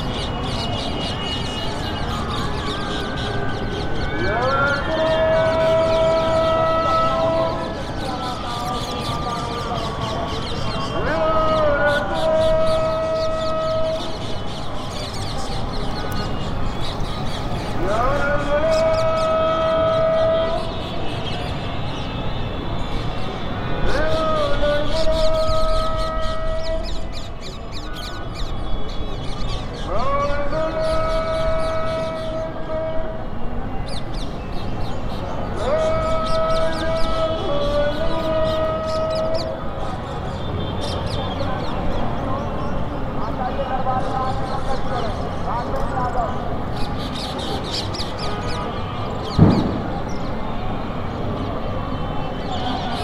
Jhokan Bagh, Jhansi, Uttar Pradesh, Inde - Atmosphere of Jhansi after the sunset